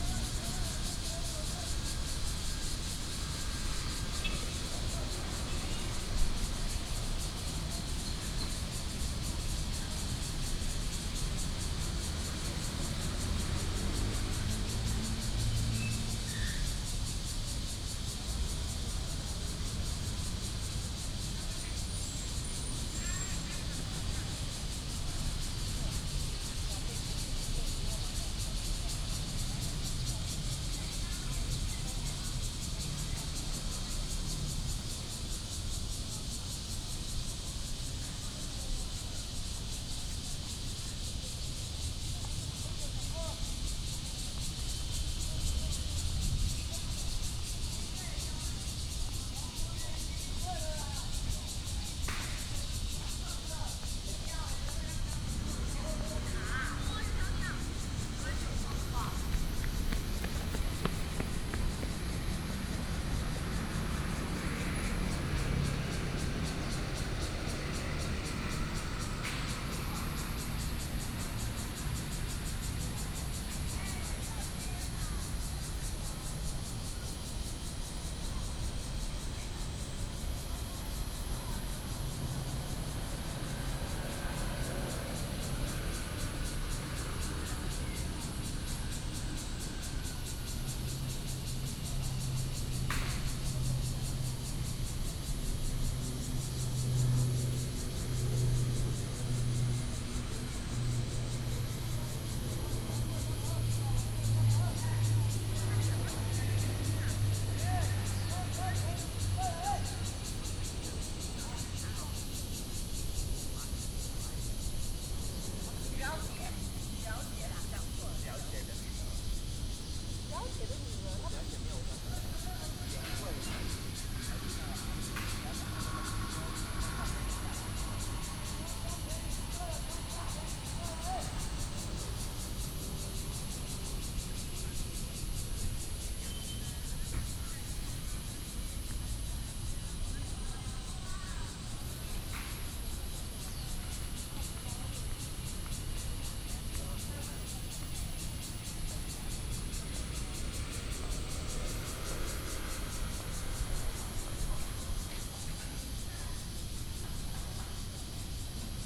中山區集英里, Taipei City - Cicadas
Traffic Sound, Cicadas, Hot weather, Aircraft flying through
Sony PCM D50+ Soundman OKM II
Taipei City, Taiwan, 26 June 2014